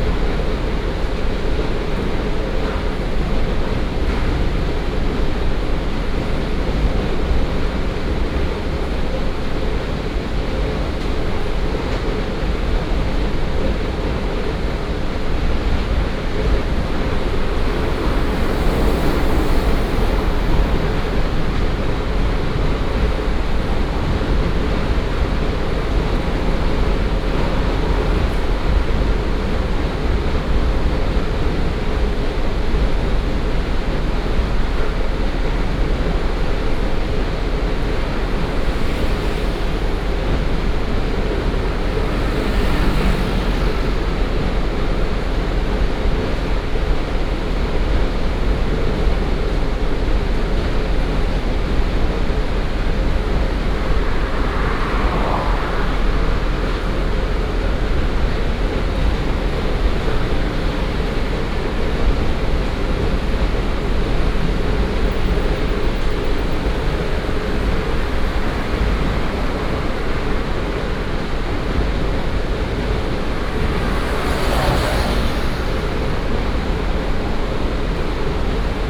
太平區太堤東路99號, Taichung City - Next to the gravel yard
Next to the gravel yard, Traffic sound, Sand treatment plant, Binaural recordings, Sony PCM D100+ Soundman OKM II
Taiping District, Taichung City, Taiwan, 1 November, ~17:00